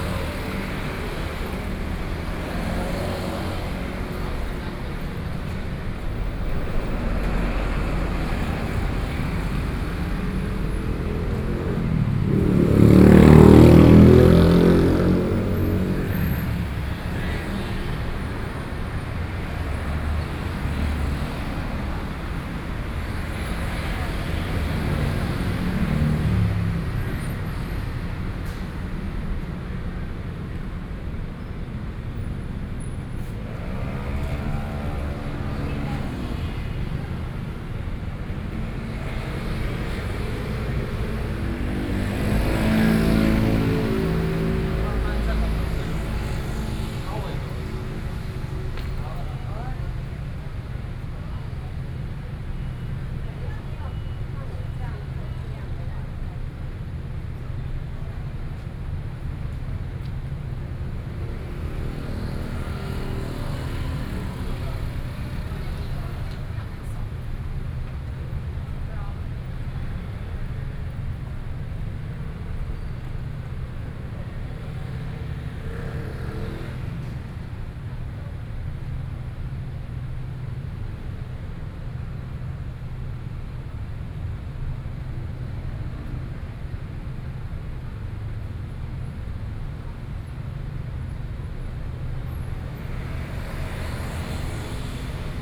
Traffic Noise, Sony PCM D50 + Soundman OKM II